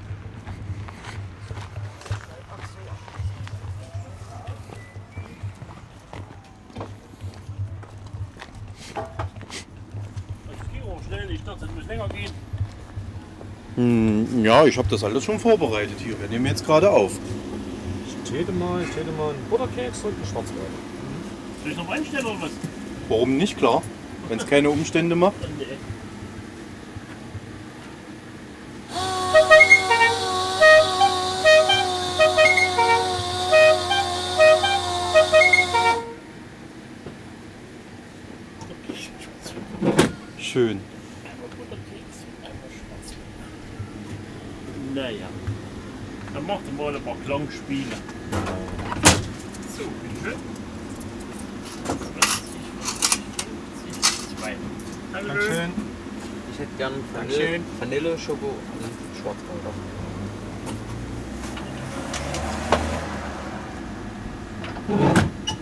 gotha, kjz big palais, besuch vom eismann im big palais - besuch vom eismann
der eismann kommt zum big palais. stimmen, eismann, eismannbimmel, kunden.